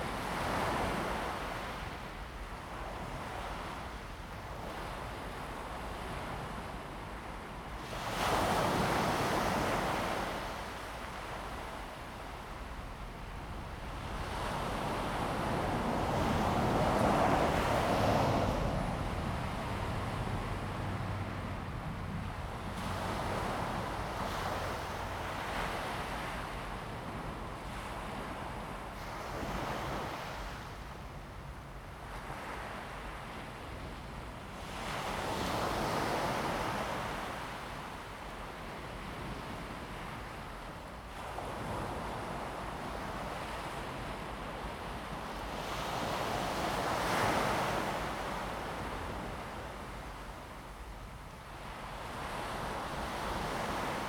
枋山鄉中山路三段, Fangshan Township - Late night seaside
Late night seaside, traffic sound, Sound of the waves
Zoom H2n MS+XY